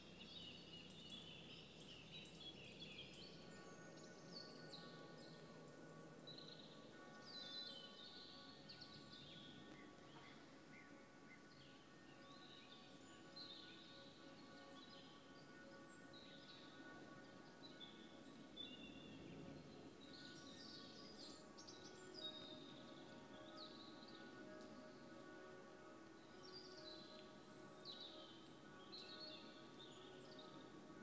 Tankwa Town, Northern Cape, South Africa - Pipe Dreams Burn
A binaural foldown of an Ambisonic recording from within the safety perimeter at Afrikaburn; the burning of the art piece Pipe Dreams